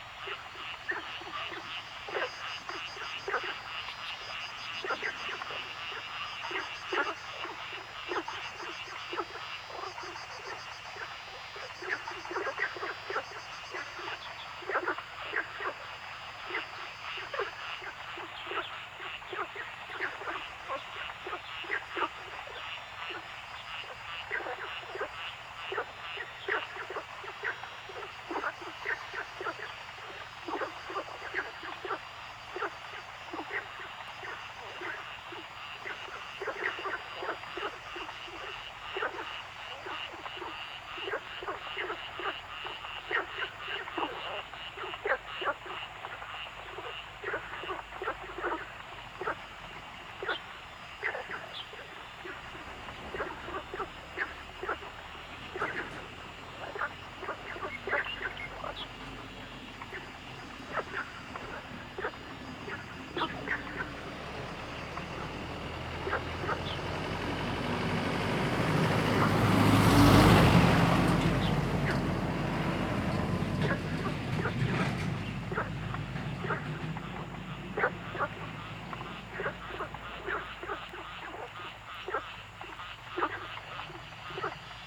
{
  "title": "Zhonggua Rd., 桃米里 - Ecological pool",
  "date": "2016-05-03 16:58:00",
  "description": "Bird sounds, Frog sounds\nZoom H2n MS+XY",
  "latitude": "23.95",
  "longitude": "120.92",
  "timezone": "Asia/Taipei"
}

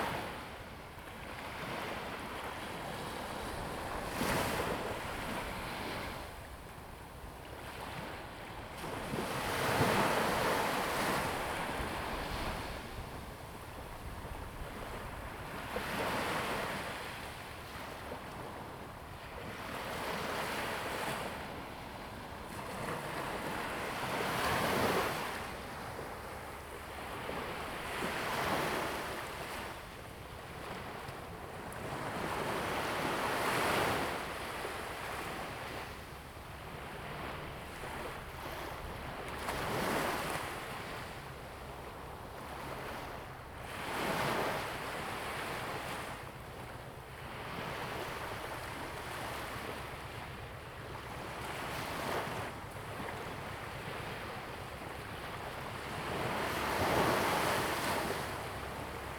{
  "title": "龍門村, Huxi Township - At the beach",
  "date": "2014-10-21 11:09:00",
  "description": "At the beach, sound of the Waves\nZoom H2n MS+XY",
  "latitude": "23.55",
  "longitude": "119.68",
  "altitude": "3",
  "timezone": "Asia/Taipei"
}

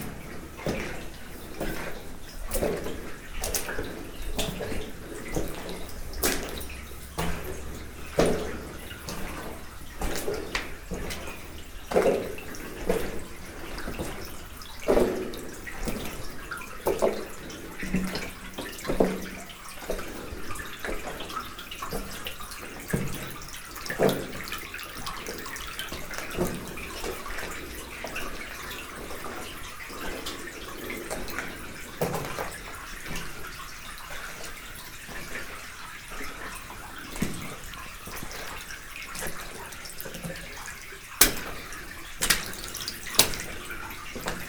{
  "title": "Audun-le-Tiche, France - Magéry stairs",
  "date": "2016-08-20 09:50:00",
  "description": "The Magéry staircase is a very exhausting stairway descending into the deep mine. This stairway was used by russian prisoners during the World War II, under the german constraint. Russian prisoners, essentially women, were descending into the mine, in aim to work there. It was extremely difficult for them. This recording is when I climb the stairs.",
  "latitude": "49.47",
  "longitude": "5.96",
  "altitude": "333",
  "timezone": "Europe/Paris"
}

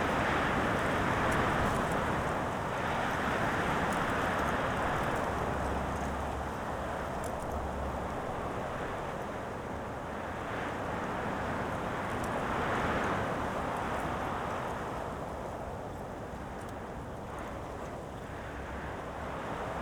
{
  "title": "Dingli, Malta - wind",
  "date": "2017-04-08 16:10:00",
  "description": "(SD702, AT BP4025)",
  "latitude": "35.85",
  "longitude": "14.38",
  "altitude": "200",
  "timezone": "Europe/Malta"
}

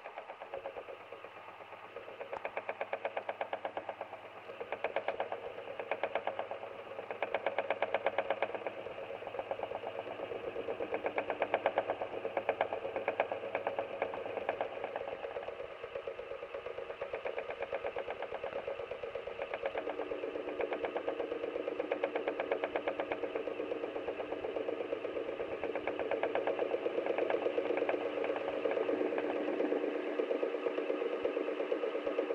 {
  "title": "Svalbard, Svalbard and Jan Mayen - Mine 7, Longyearbyen",
  "date": "2012-09-05 12:50:00",
  "description": "Mine 7 is the only active mine in Longyearbyen and provides the town with coal. The recordings are from in the mine. The noise level inside is immense and I recorded by using contact mics on the different infrastructure connected to the machinery. The field recording is a part of The Cold Coast Archive.",
  "latitude": "78.17",
  "longitude": "16.00",
  "timezone": "Arctic/Longyearbyen"
}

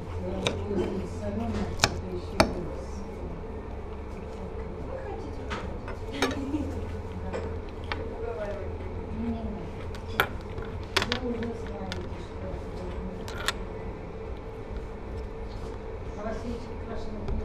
{
  "title": "Cafeteria in Baltimarket (Baltijaam). Me and other people eating",
  "date": "2011-04-20 13:52:00",
  "description": "Inside the cafeteria in Baltimarket(Baltijaam). Sounds of myself and other customers eating. (jaak sova)",
  "latitude": "59.44",
  "longitude": "24.74",
  "altitude": "18",
  "timezone": "Europe/Tallinn"
}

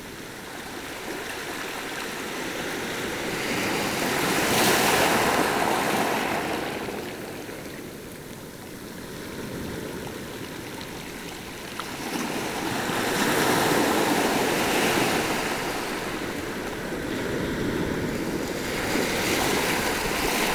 {
  "title": "Saint-Clément-des-Baleines, France - The sea",
  "date": "2018-05-21 20:30:00",
  "description": "The sea, beginning to reach the low tide, on the big Kora karola beach.",
  "latitude": "46.22",
  "longitude": "-1.54",
  "timezone": "Europe/Paris"
}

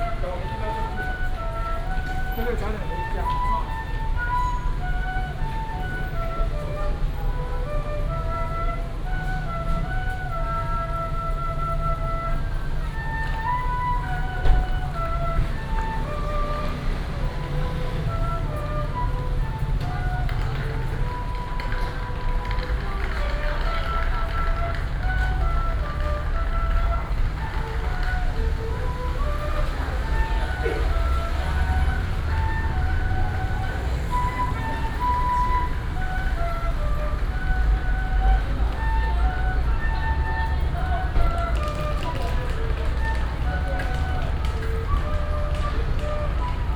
{
  "title": "臺中舊火車站, Taichung City - Old station hall entrance",
  "date": "2017-04-29 19:20:00",
  "description": "in the Old station hall entrance, Traffic sound, Footsteps, old Street artist",
  "latitude": "24.14",
  "longitude": "120.69",
  "altitude": "81",
  "timezone": "Asia/Taipei"
}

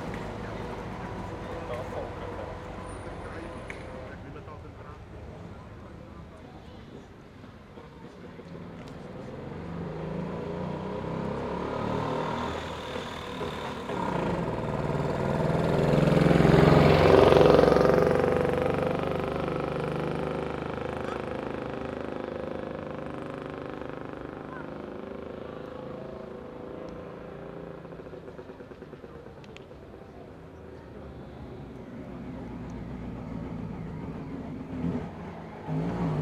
{
  "title": "Klecany, Česká republika - Klecany Veteran Rallye",
  "date": "2007-06-08 08:59:00",
  "description": "Tens of shiny old cars with two-stroke engine trying to climb a hill. Shortened recording of several of them.\njiri lindovsky",
  "latitude": "50.18",
  "longitude": "14.41",
  "altitude": "256",
  "timezone": "Europe/Prague"
}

